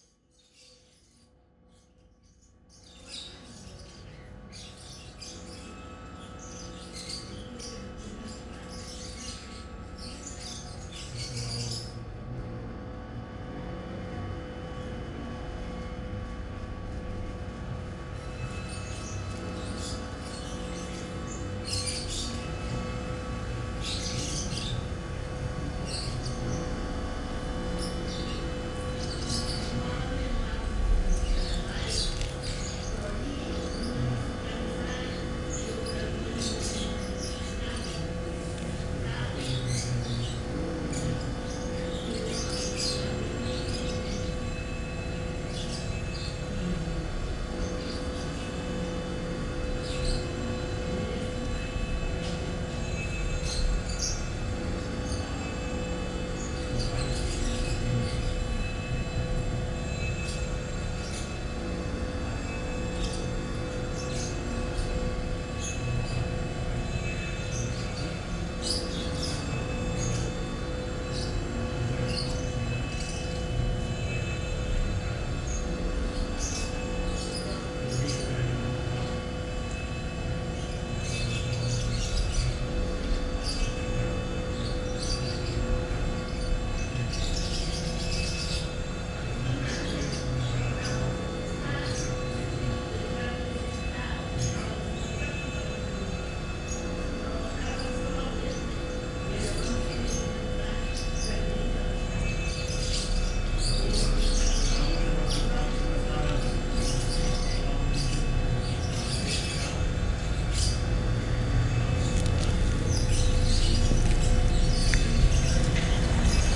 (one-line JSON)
{"title": "Carrer de les Eres, Masriudoms, Tarragona, Spain - Masriudoms Gathering of Elders & Birds", "date": "2017-10-23 15:30:00", "description": "Recorded on a pair of DPA 4060s and a Marantz PMD661", "latitude": "41.02", "longitude": "0.88", "altitude": "200", "timezone": "Europe/Madrid"}